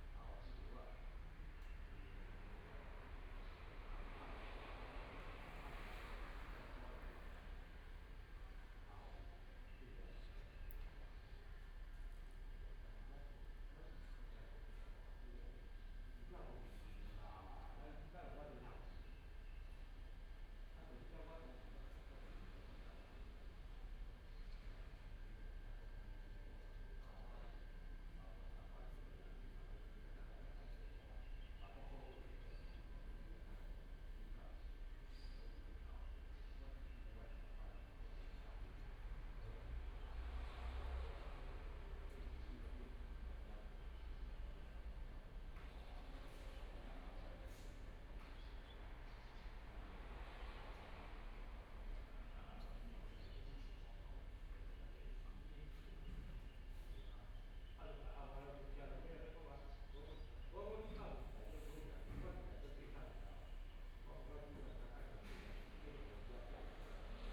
花蓮市民有里, Taiwan - in front of the temple
Walking in the small streets, Sitting in front of the temple, Traffic Sound, Elderly voice chatting
Binaural recordings
Zoom H4n+ Soundman OKM II